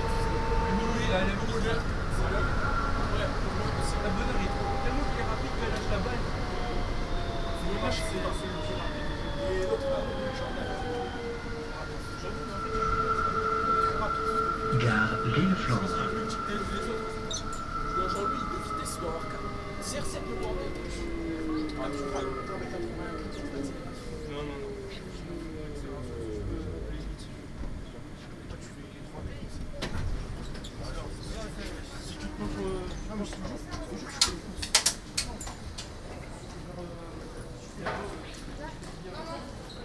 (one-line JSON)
{"title": "Lille-Centre, Lille, Frankrijk - Metro trip Lille", "date": "2016-08-21 16:30:00", "description": "I chose Gare Lille Flandres as the location of this recording because the biggest opart was recorded there. But to be precise, it a trip from (Metro 1) Rihour to Gare Lille Flandres and (Metro 2) from Gare Lille Flandres to Gare Lille Europe.", "latitude": "50.64", "longitude": "3.07", "altitude": "28", "timezone": "Europe/Paris"}